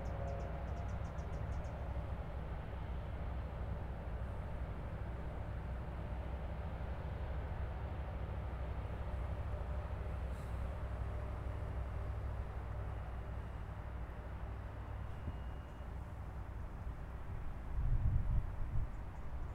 {"title": "Petroleumhavenweg, Amsterdam, Nederland - Wasted Sound Petroleumhaven", "date": "2019-11-06 14:12:00", "description": "With the wasted sound project, I am looking for sounds that are unheard of or considered as noise.", "latitude": "52.41", "longitude": "4.86", "altitude": "1", "timezone": "Europe/Amsterdam"}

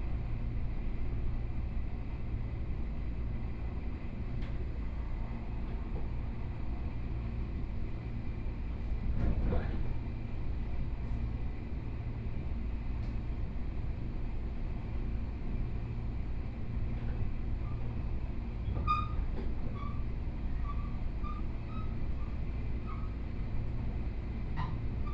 {"title": "Banqiao District - Tze-Chiang Train", "date": "2013-08-12 15:42:00", "description": "Tze-Chiang Train, from Shulin Station to Banqiao Station, Zoom H4n+ Soundman OKM II", "latitude": "25.00", "longitude": "121.45", "timezone": "Asia/Taipei"}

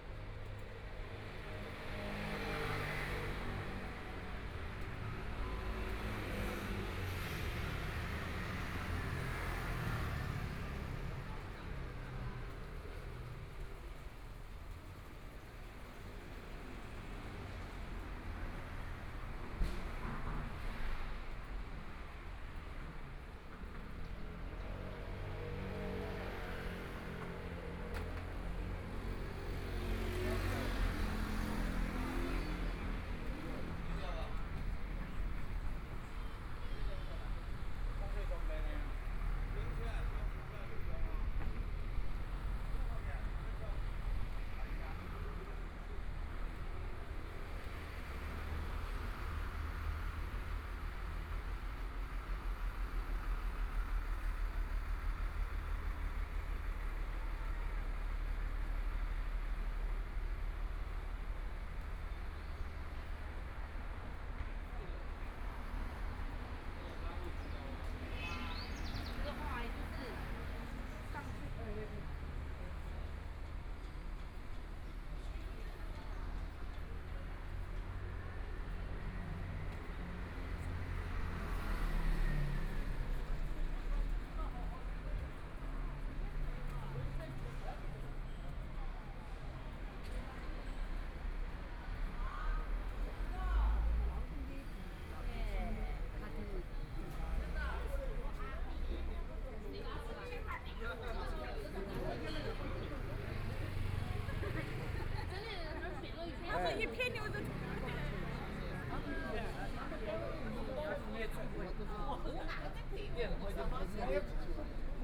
{"title": "Nong'an St., Taipei City - walking in the Street", "date": "2014-02-15 17:18:00", "description": "Walking towards the west direction, From the pedestrian street with tourists, Traffic Sound, Motorcycle sound\nBinaural recordings, ( Proposal to turn up the volume )\nZoom H4n+ Soundman OKM II", "latitude": "25.06", "longitude": "121.54", "timezone": "Asia/Taipei"}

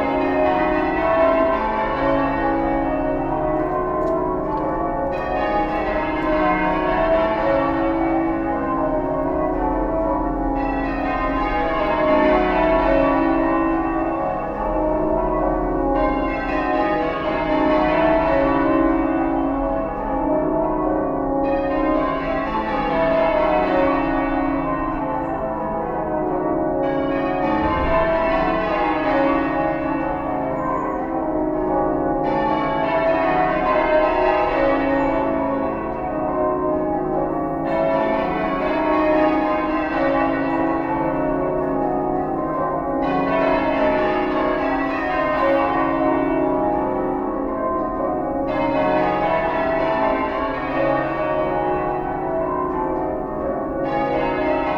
{"title": "Remembrance Day, Worcester Cathedral, UK - Remembrance Day Bells", "date": "2019-11-10 11:00:00", "description": "Recorded from College Green at the back of the cathedral to reduce traffic noise and concentrate on the bells. A single gun salute, the Bourdon Bell strikes 11am. a second gun, muffled singing from inside the cathedral then the bells make their own partly muffled salute. They rang for much longer tha this recording. Recorded with a MixPre 3, 2 x Sennheiser MKH 8020s and a Rode NTG3 shotgun mic.", "latitude": "52.19", "longitude": "-2.22", "altitude": "26", "timezone": "Europe/London"}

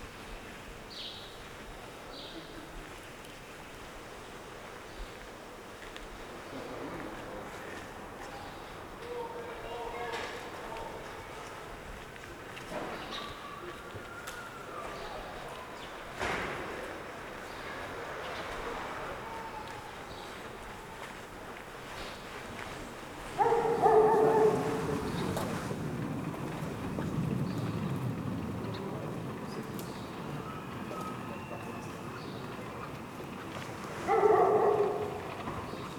{"title": "Cité Trévise, Paris, France - Paris un 8 mai, une ville sans voiture", "date": "2013-05-08 11:58:00", "description": "H4n + AKG C214", "latitude": "48.87", "longitude": "2.35", "altitude": "48", "timezone": "Europe/Paris"}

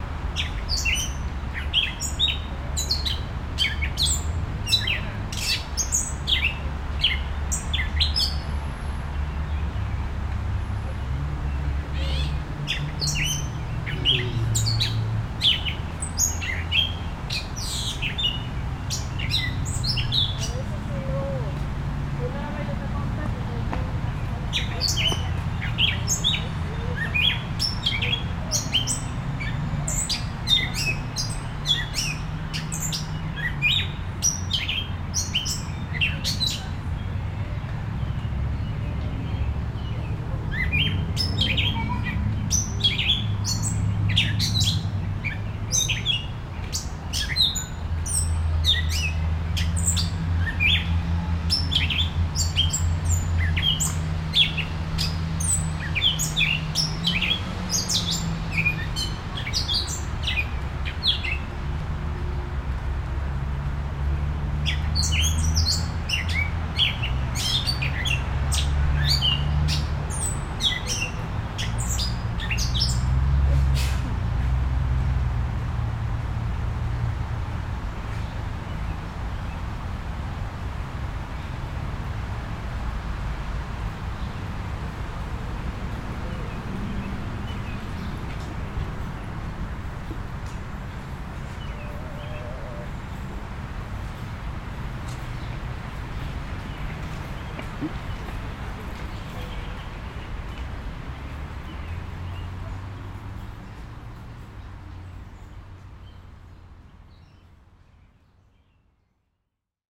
{"title": "Jamaica Ave, Brooklyn, NY, USA - Ridgewood Reservoir", "date": "2021-06-15 10:30:00", "description": "Short recording of bird sounds on the forest of that surrounds the freshwater pond of the Ridgewood Reservoir.\nThe Reservoir is home to more than 160 species of birds.\nZoom H6", "latitude": "40.69", "longitude": "-73.89", "altitude": "47", "timezone": "America/New_York"}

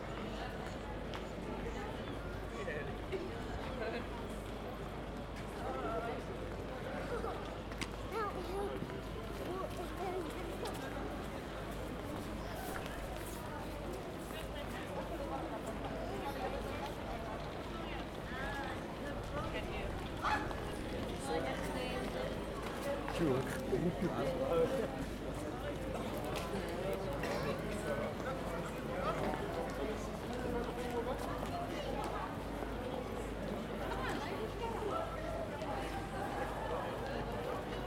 {"title": "North City, Dublín, Irlanda - Earl Street North Multilingual", "date": "2014-03-17 10:56:00", "description": "People walking through this passage heading Saint Patrick's parade route", "latitude": "53.35", "longitude": "-6.26", "altitude": "11", "timezone": "Europe/Dublin"}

